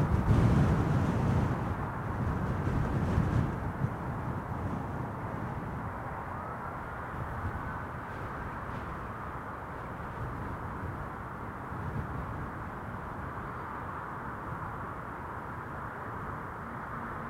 Contención Island Day 67 outer southwest - Walking to the sounds of Contención Island Day 67 Friday March 12th
The Drive Moor Place Woodlands Woodlands Avenue Westfield Grandstand Road
Back on rough grass
a lark sings beneath the wind
Distant figures
insect small
a lone runner strides
The smooth horizon north
prickles with buildings in the south
England, United Kingdom